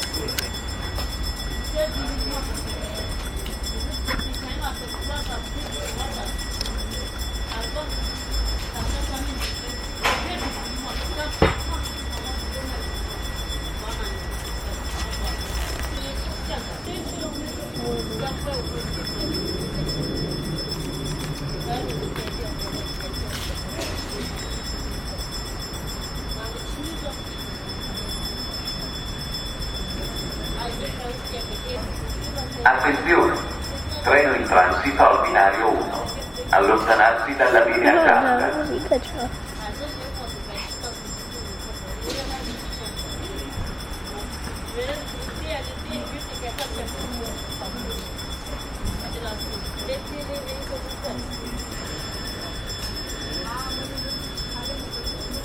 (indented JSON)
{
  "title": "Piazza Stazione, Parabiago, Stazione",
  "date": "2007-09-15 15:57:00",
  "description": "stazione (settembre 2007)",
  "latitude": "45.55",
  "longitude": "8.95",
  "altitude": "182",
  "timezone": "Europe/Rome"
}